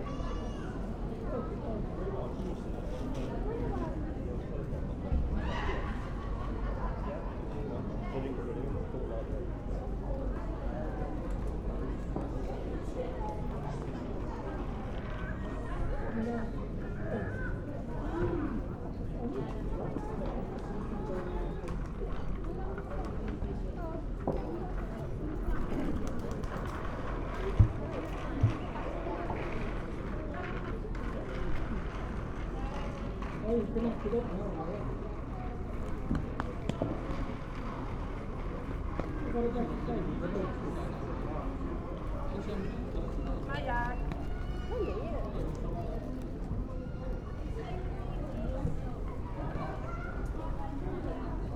Street sounds then a girl singer on the other side of the road performs over conversations and other random noise. Another experiment with long recordings.
MixPre 6 II with 2 Sennheiser MKH 8020s.